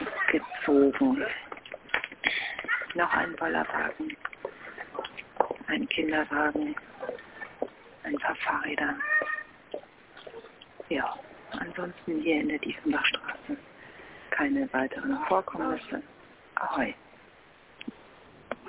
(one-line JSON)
{"title": "Telefonzelle, Dieffenbachstraße - Bärchen und Zeichen verschwinden 29.08.2007 11:51:43", "latitude": "52.49", "longitude": "13.42", "altitude": "42", "timezone": "GMT+1"}